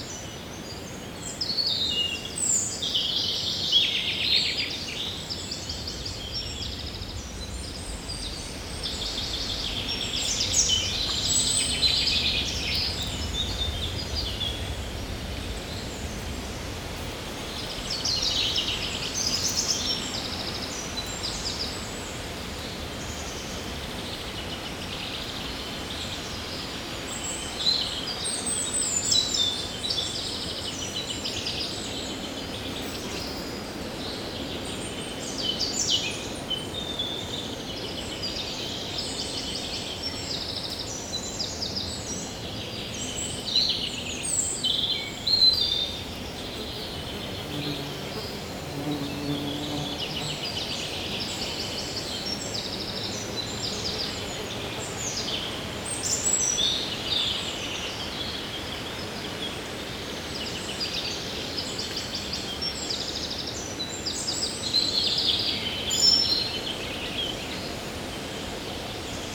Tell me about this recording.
European robin singing, and a Common Wood Pigeon rummages into the dead leaves.